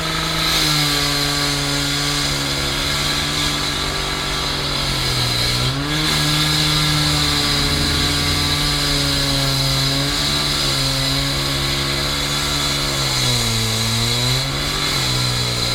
refrath, steinbreche, strassenbau
bauarbeiter und maschine zum durchsägen des strassenasphalts, morgens
soundmap nrw - social ambiences - sound in public spaces - in & outdoor nearfield recordings